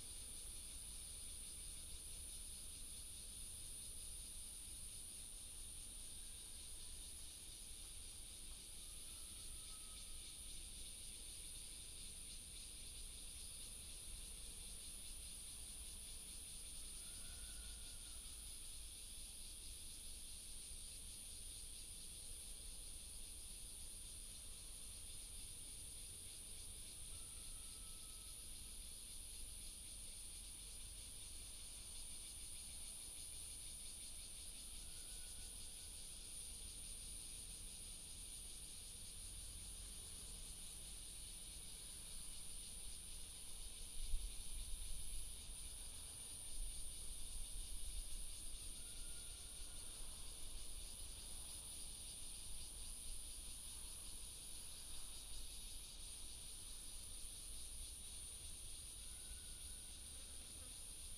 義民路二段380巷57弄, Xinpu Township - High-speed railway train
Cicada, traffic sound, birds sound, High - speed railway tunnel, High-speed railway train passing through
Hsinchu County, Taiwan